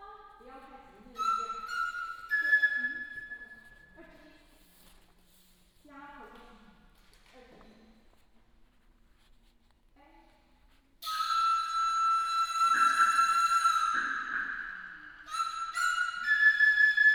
The Xiqu Center of Taiwan, Taipei City - Command and rehearsal
Command and rehearsal